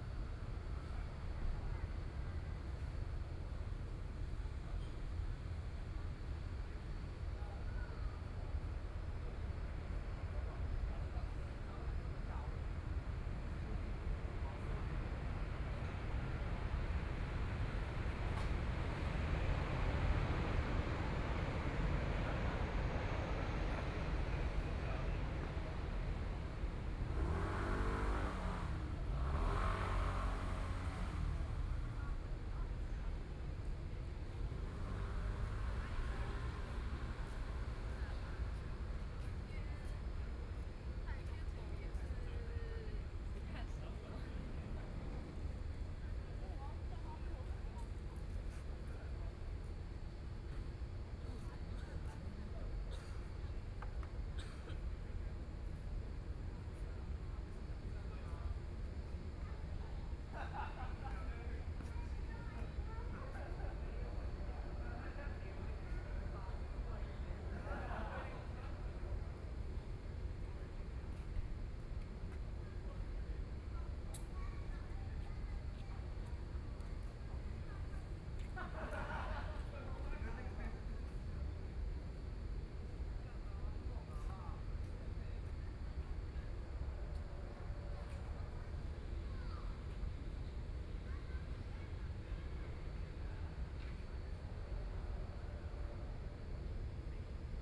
雙城公園, Taipei City - Night in the park
Night in the park, Traffic Sound, Aircraft flying through
Binaural recordings
Zoom H4n+ Soundman OKM II